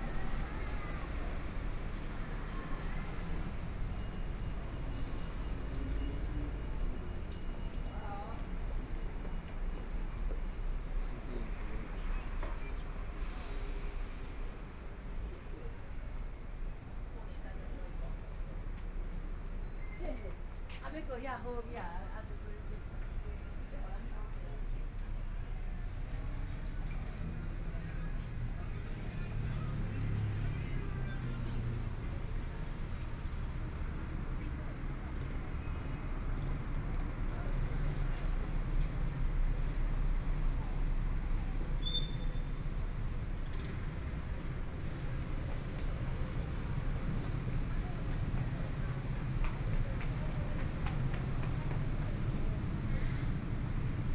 walking on the Road, Traffic Sound, Motorcycle sound, Various shops voices, Binaural recordings, Zoom H4n + Soundman OKM II
Zhongshan District, Taipei City, Taiwan, 6 February